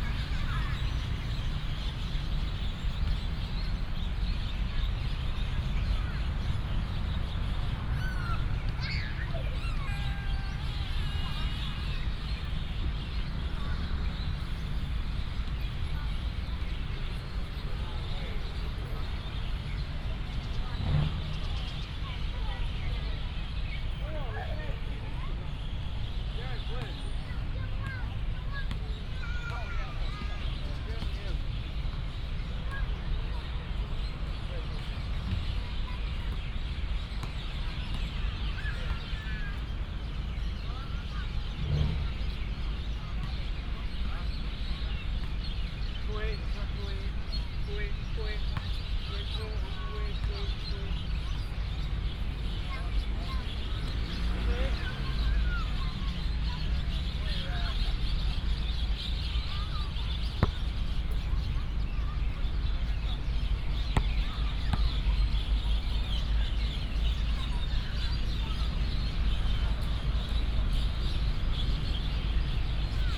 十九甲健康公園, Dali Dist., Taichung City - Walking in the park
Walking in the park, Traffic sound, Bird call, Off hours, Binaural recordings, Sony PCM D100+ Soundman OKM II
Dali District, Taichung City, Taiwan, 1 November, ~18:00